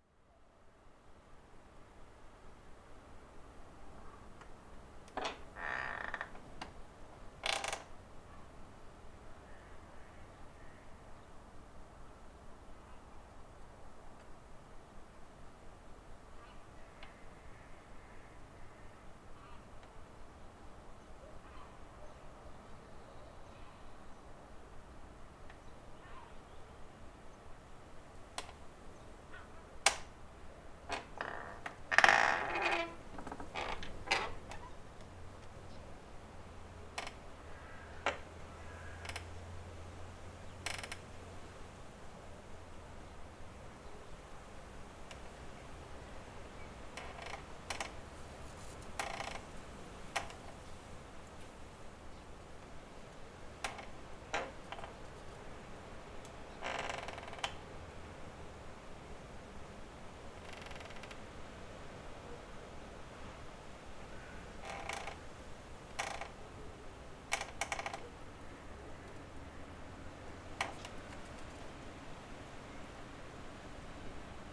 A. Juozapavičiaus pr., Kaunas, Lithuania - Cracking doors of an abandoned building
A recording of an abandoned building doorway, listening from the inside out. Soft wind and distant city hum is interrupted by a cracking door. Recorded with ZOOM H5.
Kauno apskritis, Lietuva